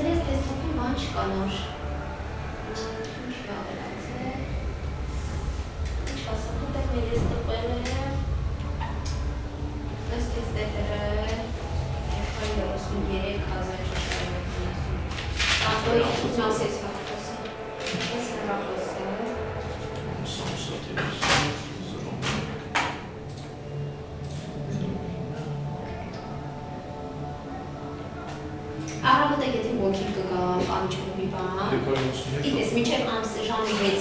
Yerevan, Arménie - Hotel receptionist
The One Way hostel is a cheap and extremely friendly hostel, in the center of Erevan called Kentron, only five minutes to walk to the Republic square. It’s good for backpackers. During this late evening, a concert is occurring on Charles Aznavour square. The friendly receptionist is explaining the day to the substitute doing the night.